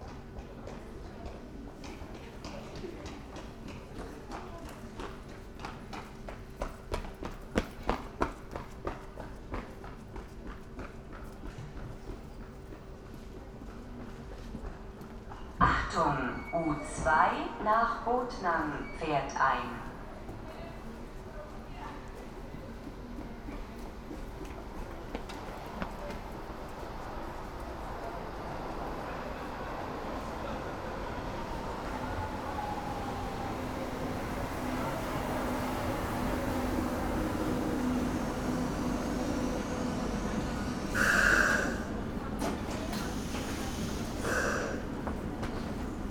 Subway station Rathaus
Sony PCM D50